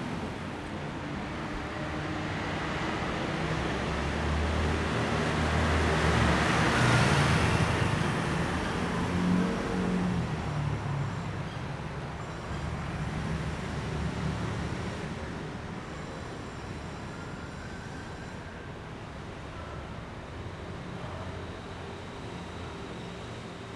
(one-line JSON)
{"title": "Ln., Jingping Rd., Zhonghe Dist., New Taipei City - Walking in a small alley", "date": "2009-12-15 15:25:00", "description": "Walking in a small alley, Sony ECM-MS907, Sony Hi-MD MZ-RH1", "latitude": "24.99", "longitude": "121.50", "altitude": "17", "timezone": "Asia/Taipei"}